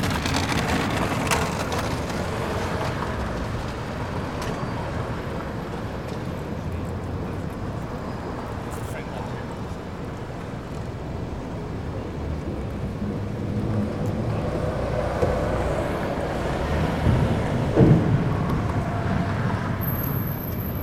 West Loop Gate, Chicago, IL, USA - Nearing Union Station, Chicago (Urban ambiance)
Simple recording of downtown ambiance near Chicago's Union Station. Just me with a Tascam DR-07 set up on a concrete ledge, peering out towards cabs and bikers racing down one of the city's busier avenues. Used a wind screen and low cut filter, was around 9 AM in the middle of July morning commute. You can also hear people walking past and pulling luggage on rollers and little bits of their conversations.